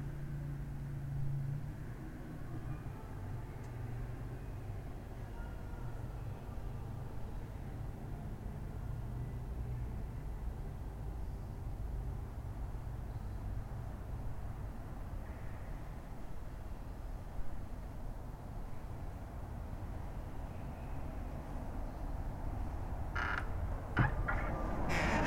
R. do Salinas, Angra do Heroísmo, Portugal - Igreja da Sé
These recordings are part of the Linschoten Workshop, a work done with the students of the Francisco Drummond school of eighth year.
A sound landscape workshop with which a mapping has been made walking the city of Angra do Heroísmo, a world heritage site, through the Linschoten map, a map of the XVi century, which draws the Renaissance city. With the field recordings an experimental concert of sound landscapes was held for the commemorations of UNESCO. 2019. The tour visits the city center of Angra. Jardim Duque da Terceira, Praça Velha, Rua Direita, Rua São João, Alfandega, Prainha, Clube Náutico, Igreja da Sé, Igreja dos Sinos, Praça Alto das Covas, Mercado do Duque de Bragança-Peixeria.
Recorded with Zoom Hn4pro